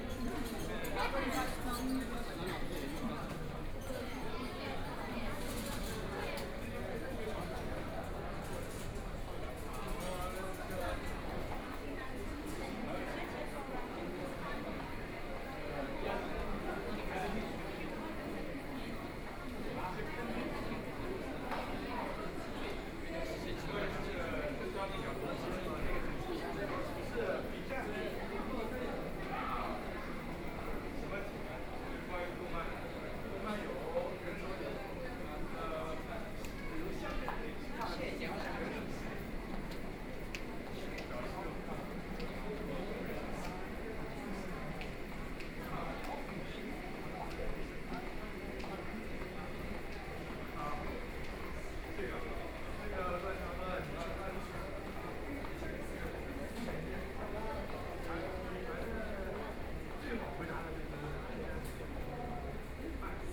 Zhabei District, Shanghai - soundwalk
From the subway underground passage into, After many underground shopping street, Enter the subway station, The crowd, Binaural recording, Zoom H6+ Soundman OKM II